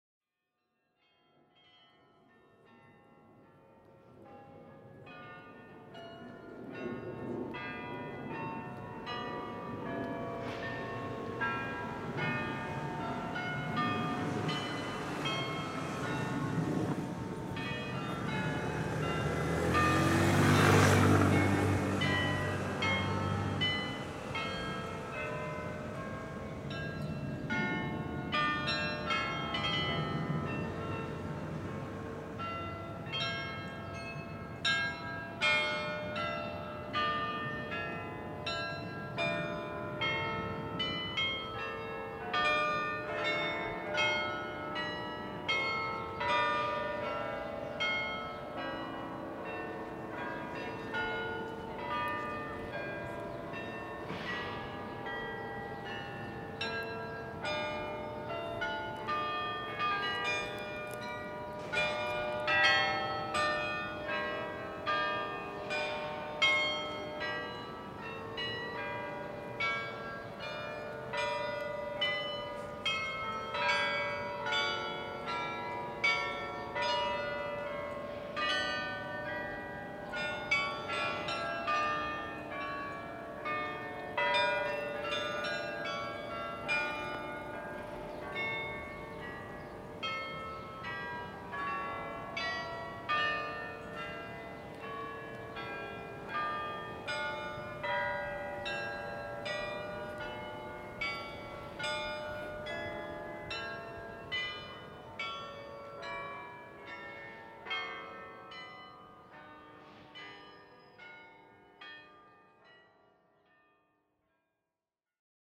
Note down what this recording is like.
Street recording in Amsterdam of the Zuiderkerkstoren church bells carillon playing a tune.